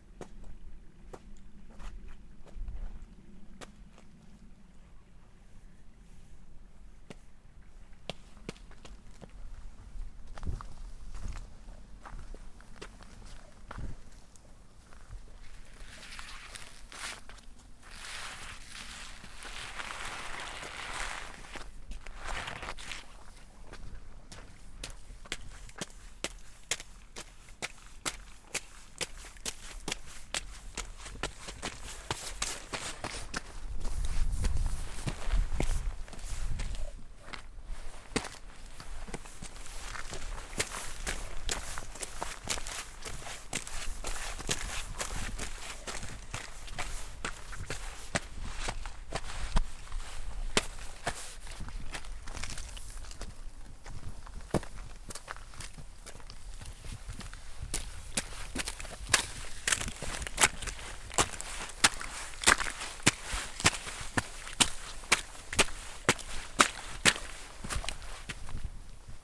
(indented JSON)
{
  "title": "Redmires, Sheffield, UK - Ice Squelch & mini-recordist",
  "date": "2022-01-15 11:50:00",
  "description": "Playing in the semi frozen mud with Mini-Recordist.",
  "latitude": "53.36",
  "longitude": "-1.60",
  "altitude": "326",
  "timezone": "Europe/London"
}